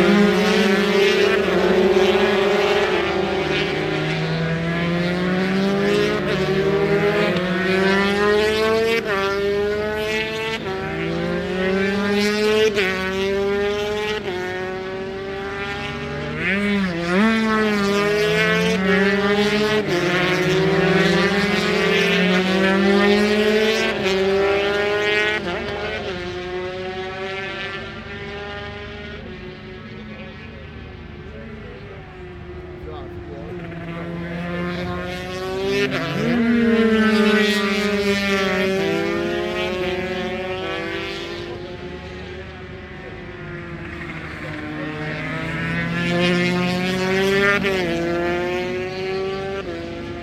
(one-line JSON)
{"title": "Unit 3 Within Snetterton Circuit, W Harling Rd, Norwich, United Kingdom - British Superbikes 2005 ... 125 qualifying ...", "date": "2005-07-09 13:00:00", "description": "british superbikes ... 125 qualifying ... one point stereo mic to minidisk ... time approx ...", "latitude": "52.46", "longitude": "0.95", "altitude": "41", "timezone": "Europe/London"}